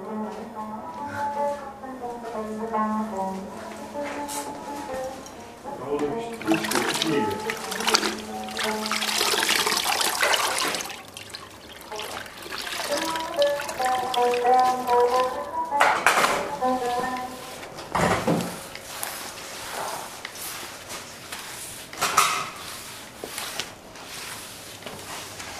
Sunny Afternoon, wiping the floor singing a good old song
What was left of the party? Cigarette butts, beer puddles, good mood and that certain melody...
28 September, ~02:00